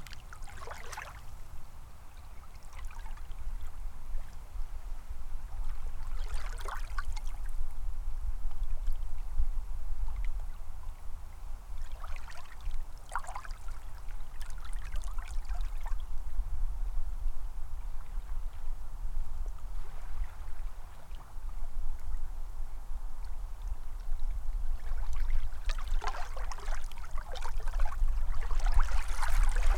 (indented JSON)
{
  "title": "East Bay Park, Traverse City, MI, USA - Freezing Ripples in February",
  "date": "2016-02-04 14:55:00",
  "description": "Thursday afternoon on a winter's day. Minor water movement heard, near shore, with most of bay otherwise frozen. Airplane headed to/from nearby airport heard. Stereo mic (Audio-Technica, AT-822), recorded via Sony MD (MZ-NF810, pre-amp) and Tascam DR-60DmkII.",
  "latitude": "44.76",
  "longitude": "-85.58",
  "altitude": "175",
  "timezone": "America/Detroit"
}